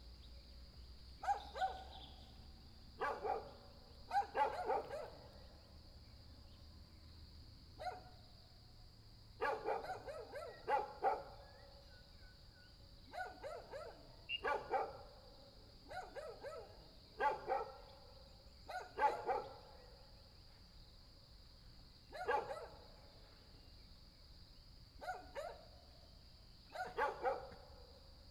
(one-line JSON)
{"title": "Shuishang Ln., 桃米里, Puli Township - Bird sounds", "date": "2016-04-21 05:57:00", "description": "Bird sounds, Dogs barking", "latitude": "23.94", "longitude": "120.92", "altitude": "564", "timezone": "Asia/Taipei"}